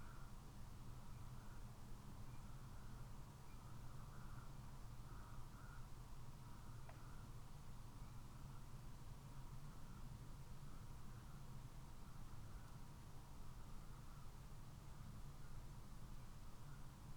tawny owl calling ... xlr mics in a SASS on tripod to Zoom H5 ... bird calls then is quiet ... calls at 2:28 ... then regularly every minute ... ish ... contact call for the female ... or boundary call to show territory ..? no idea ...
Malton, UK, 5 May 2020